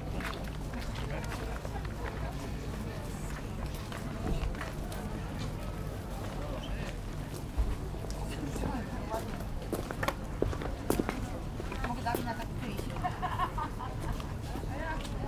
Łąkowa, Gostyń, Polska - City Marketplace on a Friday
This recording was captured with a Sony PCM-D100 at the city marketplace on a Friday when the usual market trade was taking place.